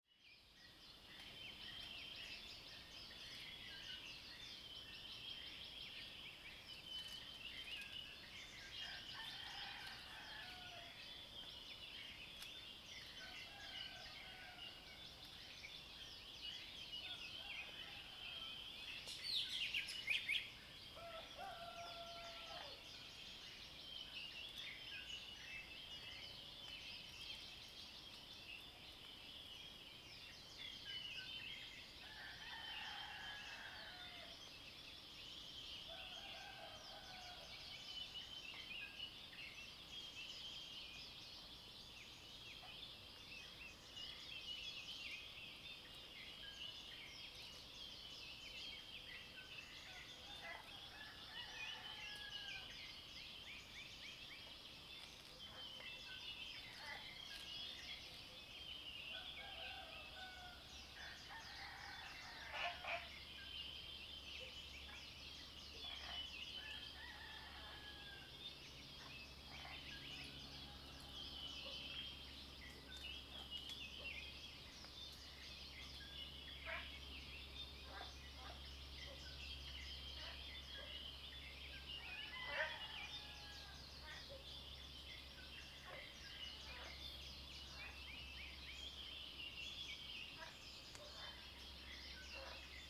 綠屋民宿, Nantou County - Early morning
Crowing sounds, Bird calls, at the Hostel, Frogs chirping
Zoom H2n XY+MS